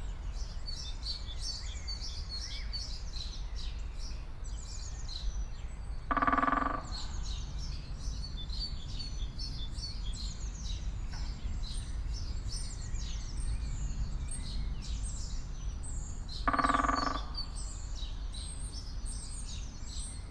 Friedhof Columbiadamm, Berlin - woodpecker performing
Großer Buntspecht, great spotted woodpecker (Dendrocopos major). I've heard quite a few this morning, but this one was special. He has choosen a nesting box for bats, high above in a tree, as a resonance box for his drumming performance. That made his sound definitely the most impressive and loudest one among the other woodpeckers here. And it was successful, after a few minutes, a female bird showed up and they left the tree together.
(SD702, DPA4060)